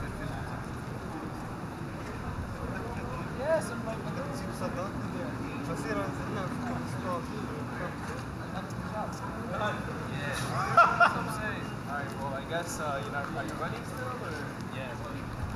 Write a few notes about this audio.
Mont Royal ave, Zoom MH-6 and Nw-410 Stereo XY